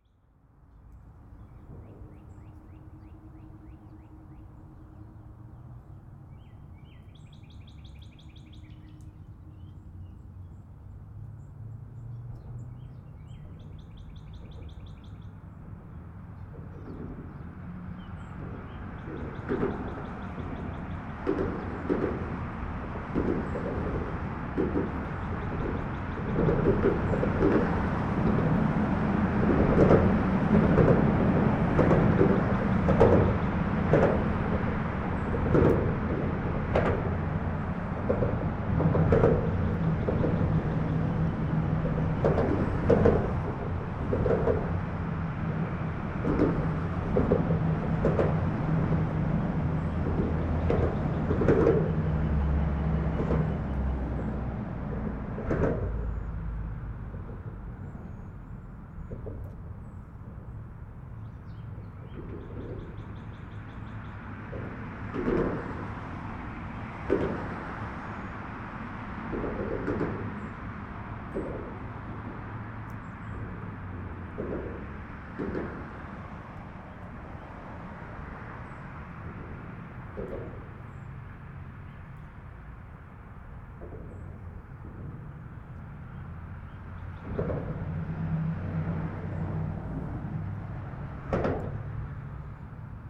under the Parmer Ln overpass, Austin TX
sounds of the highway above as cars pass overhead